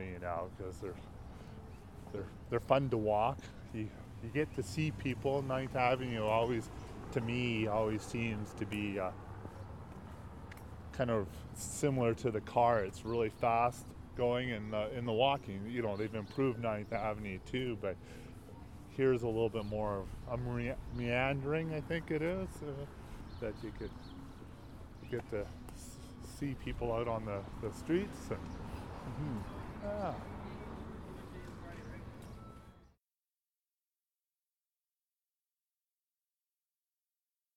Through telling and sharing stories about the East Village, the project gives space to experiences and histories that are not adequately recognized.
4 April, 17:12